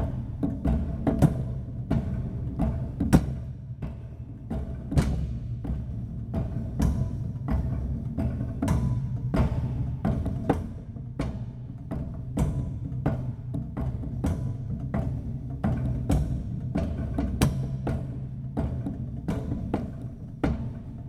Agiofaraggo Canyon Footpath, Festos, Greece - Steel door percussion
The recording was performed at a monastery built at the end of the majestic agiofarago gorge. Inside there is a huge door made out of steel, kind of like a prison cell door, that makes a tremendous sound. I used it as a percussive instrument to make this recording. The recorder was placed on the door.